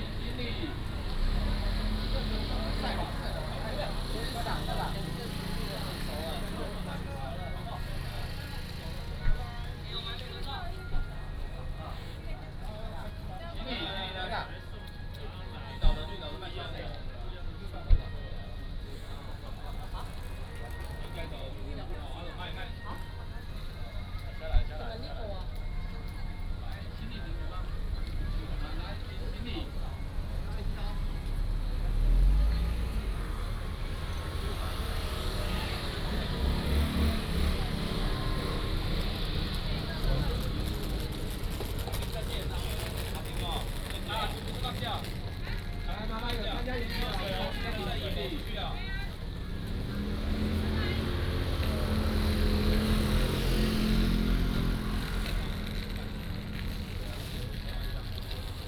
南寮漁港, Lüdao Township - Visitors Pier
Visitors Pier
Binaural recordings
Sony PCM D100+ Soundman OKM II
Lyudao Township, Taitung County, Taiwan, 31 October 2014